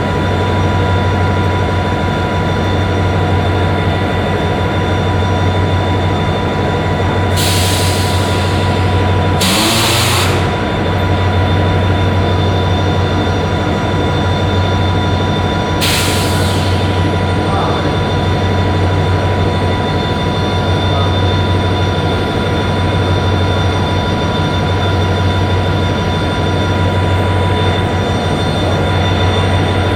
Tunis Centre, Tunis, Tunesien - tunis, main station, two trains
Standing between two train tracks at the main station. A long recording of two old trains standing at the tracks of the terminus with running engines making funny air release sounds. A third train arrives slowly driving backwards. A train service engineer positions some metal poles at the train track.
international city scapes - social ambiences and topographic field recordings